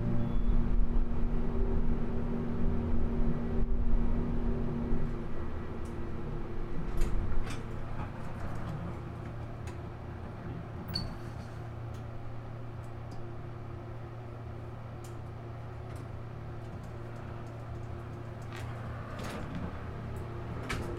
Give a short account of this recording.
Recording of a trip on the Montgomery elevator in Wescoe Hall at the University of Kansas. Montgomery Elevator Company was a vertical transportation company that also built the elevator tramway in the St. Louis Gateway Arch.